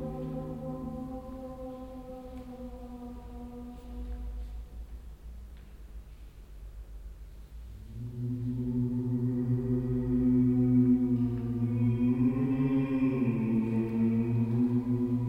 cologne, alteburger wall, neues kunstforum
ausschnitt aus vokalem ausstellungseröffnungskonzert mit applaus
soundmap nrw:
social ambiences/ listen to the people - in & outdoor nearfield recordings